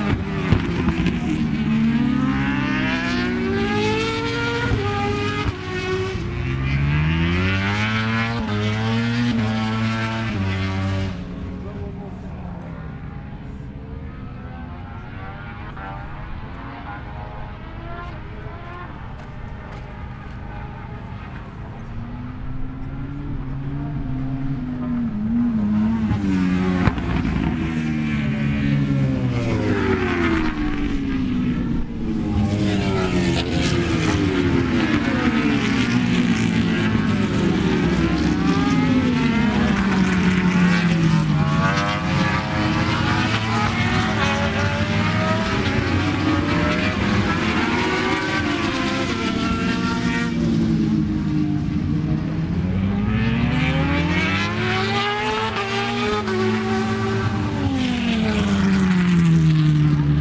Donington Park Circuit, Derby, United Kingdom - British Motorcycle Grand Prix 2003 ... moto grandprix ...
British Motorcycle Grand Prix 2003 ... Practice ... part one ... mixture of 990s and two strokes ...
11 July, 09:50